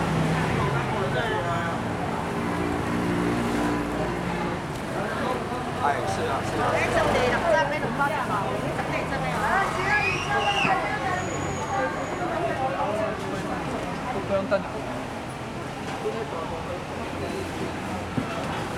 Sanzhong District, New Taipei City, Taiwan - Walking through the traditional market
Walking through the traditional market
Sony Hi-MD MZ-RH1 +Sony ECM-MS907
10 February 2012